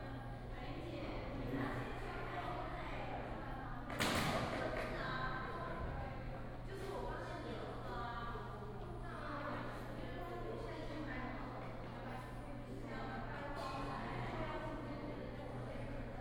青蛙ㄚ 婆的家, 桃米里, Puli Township - Bird calls
In the morning, Bird calls
Nantou County, Puli Township, 桃米巷11-3號, 17 September 2015, 05:40